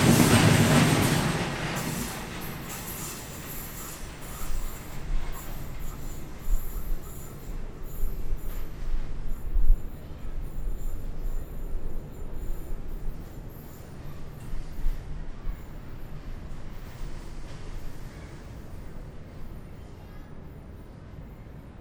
cologne, sued, kyllstrasse, züge und kindergarten
zugverkehr auf bahngleisen für güterverkehr und ICE betrieb nahe kindergarten, morgens
soundmap nrw:
sued, kyllstrasse. an kindergarten, 26 September, 10:50